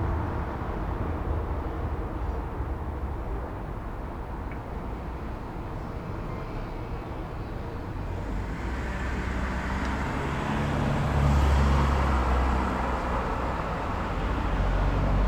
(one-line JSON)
{"title": "Berlin: Vermessungspunkt Friedelstraße / Maybachufer - Klangvermessung Kreuzkölln ::: 03.11.2010 ::: 00:03", "date": "2010-11-03 00:07:00", "latitude": "52.49", "longitude": "13.43", "altitude": "39", "timezone": "Europe/Berlin"}